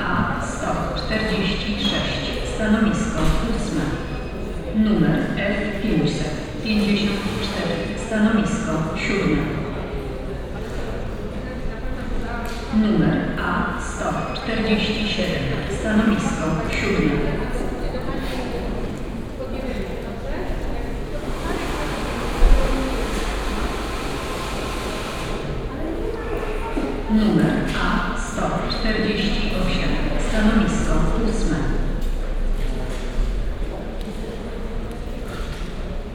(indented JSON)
{
  "title": "Poznan, Piatkowo district - PKO bank",
  "date": "2015-09-21 13:54:00",
  "description": "(binaural) ambience of a specious hall where bank individual customers get their business handled. (sony d50 + luhd pm01bin)",
  "latitude": "52.45",
  "longitude": "16.92",
  "altitude": "90",
  "timezone": "Europe/Warsaw"
}